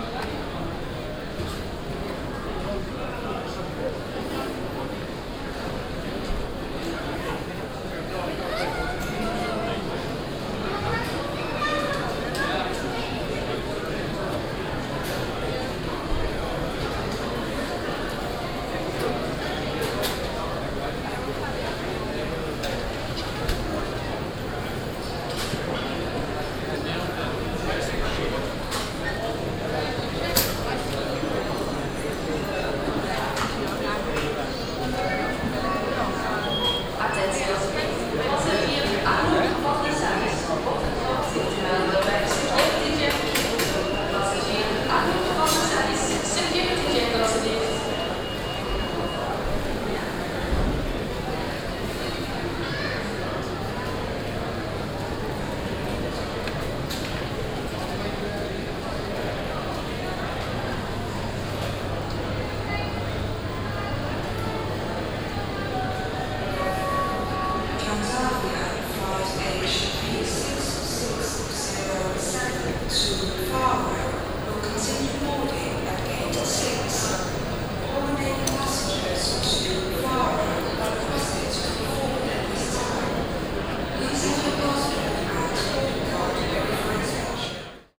Flight Forum, Eindhoven, Nederland - Eindhoven Airport
Waiting in the boarding area.
Binaural recording.
21 August 2015, 12:45